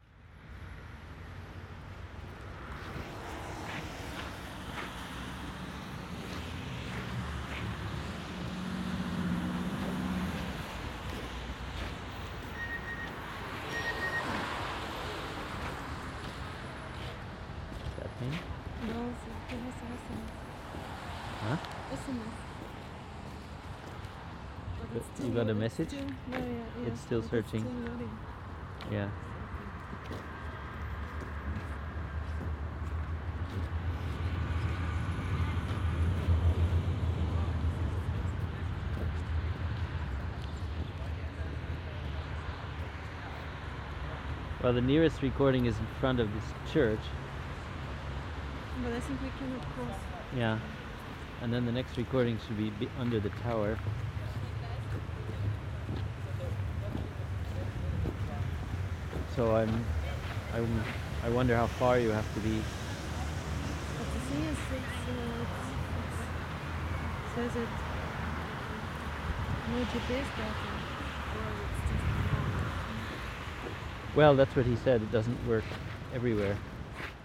In front of CTM lounge, Aporee workshop
radio aporee sound tracks workshop GPS positioning walk part 1